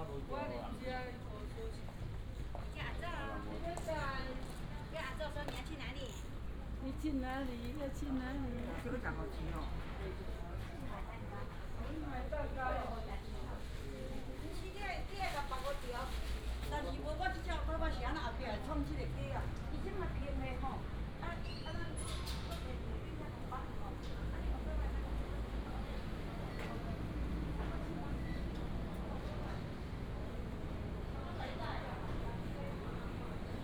Walking in the Old market and community, traffic sound